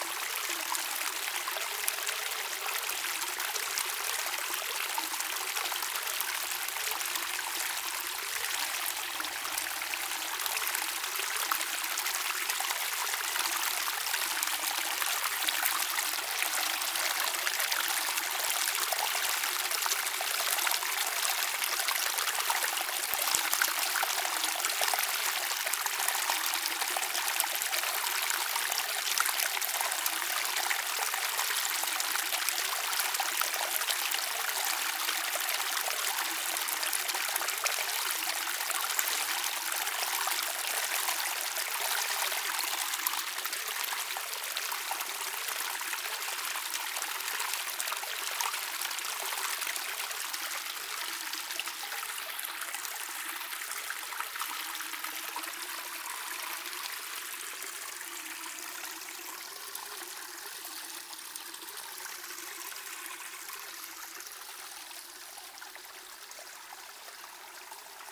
Blatno, Czechia - Bílina
Walking towards the source of the Bilina river. Binaural recording, soundman, zoom H2n